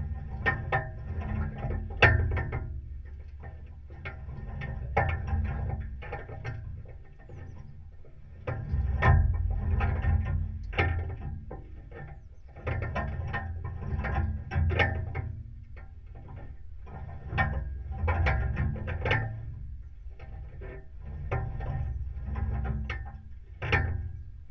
Juodkrante, Lithuania, rusty wire
Rusty barbed wire found at abandoned buildings. Contact microphones